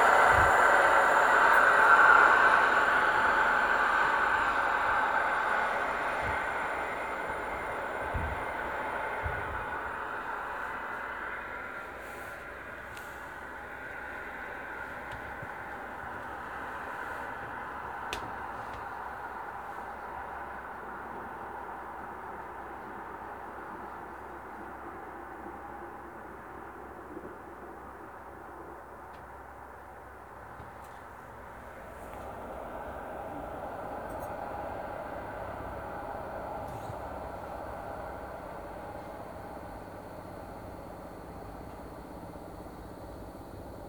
West from Lembork - train passing
(binaural) train stopped in order to let an other train pass. it went by like a flash. the train i was on slowly starts to roll towards the station.
14 August 2014